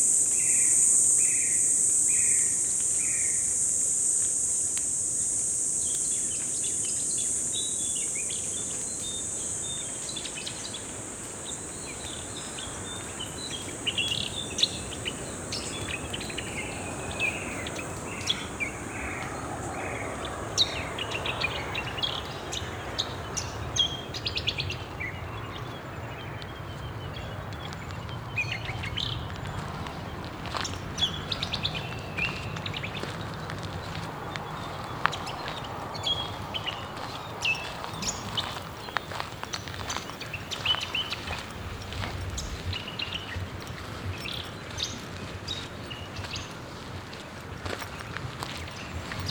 Baie de Pehdé, île de Maré, Nouvelle-Calédonie - Paysage sonore de Maré
Mercredi 11 mars 2020, île de Maré, Nouvelle-Calédonie. Profitons du confinement pour fermer les yeux et ouvrir les oreilles. On commence par le ressac des vagues sur les récifs coralliens de la baie de Péhdé. Puis l'on remonte vers la plage de Nalé par le chemin de brousse. Rapidement faire halte et écouter le crépitement, non pas d'un feu, mais celui des feuilles d'arbres arrosées par la récente pluie. Parmi les chants d'oiseaux se détache celui (a)typique du Polochion moine. Enfin surgissement des cigales avant de repartir vers la côte et la proximité de la route, entre Tadine et Wabao.